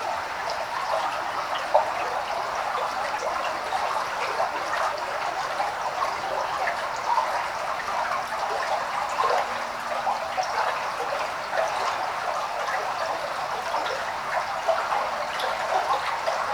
Lithuania, Vyzuonos, inflow into tube
water from the lake flow into tube